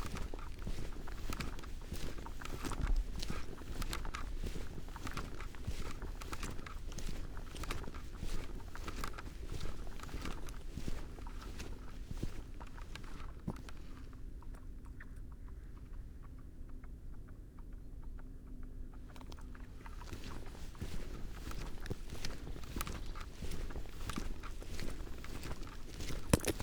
Post Box, Malton, UK - walks with a parabolic ... horse following ...
walks with a parabolic ... horse and rider following from distance then eventually catching up ... bird calls ... blue tit ... yellowhammer ... collared dove ... tree sparrow ... background noise ... footfalls ... recordist ... all sorts ...